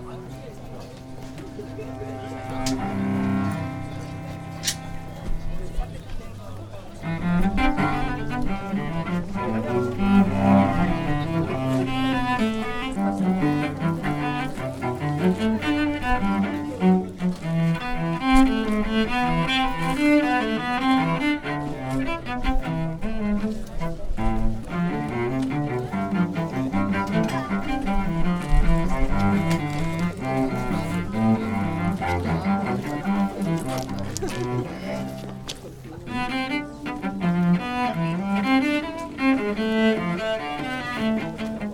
musician playing in Mauerpark jrm